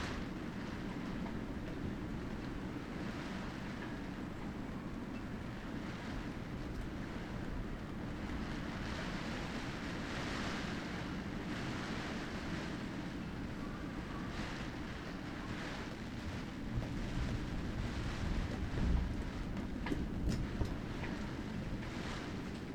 workum, het zool: marina, berth h - the city, the country & me: marina, aboard a sailing yacht
rain hits the tarp
the city, the country & me: july 18, 2009
Workum, The Netherlands, 18 July 2009, 13:18